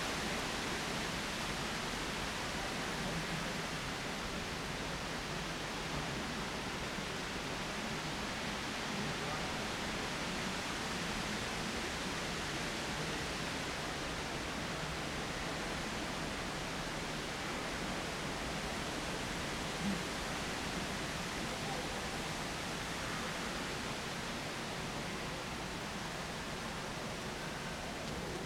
cologne, bruesseler platz, in front of church - wind in trees, night
autumn night, wind in the trees at brüsseler platz, köln